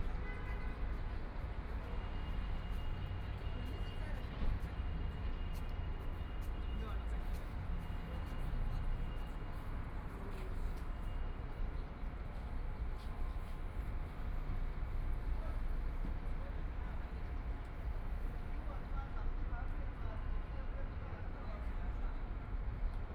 上海浦東新區 - in the square
Sitting in the square next to the, Discharge, The crowd, Traffic Sound, Binaural recording, Zoom H6+ Soundman OKM II